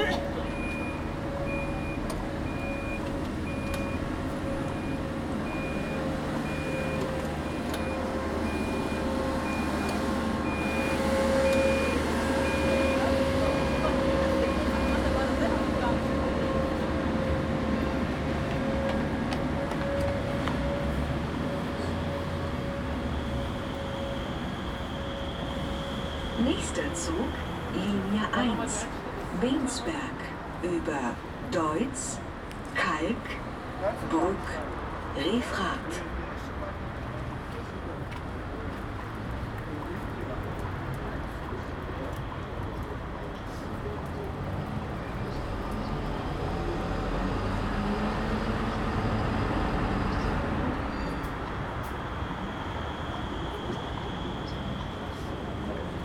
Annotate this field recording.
Stimmen, Schritte, Ampelklacken (für Blinde), Straßenbahntüren warnen piepend vor dem Schließen, in der Umgebung Busse und Autos. Voices, footsteps, lights clack (for the blind), tram doors warn bleeping before closing, around buses and cars.